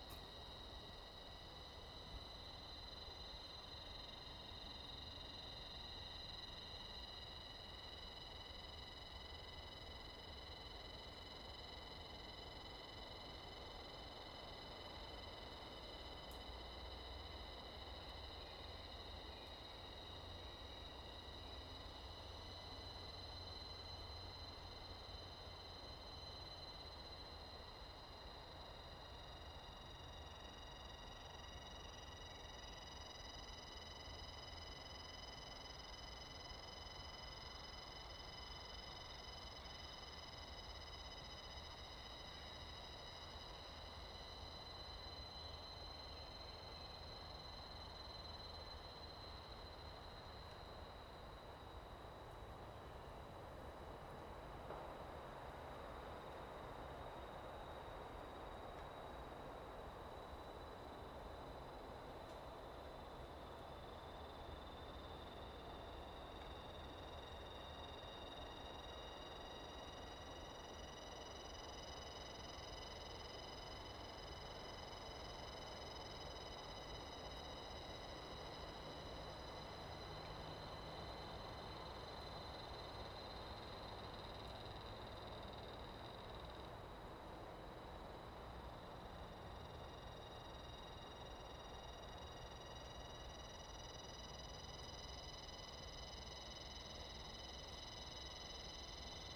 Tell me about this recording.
In the woods, Sound of insects, Wind, Zoom H2n MS +XY